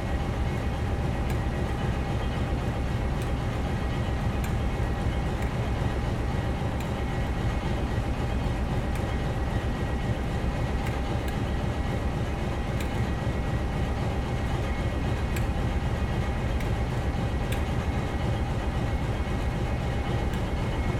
Blenheim Road, Christchurch, New Zealand - Diesel train engine in NZ Railways workshop
Climbed on the wall next to The Warehouse's carpark to record the engine using a Zoom H4n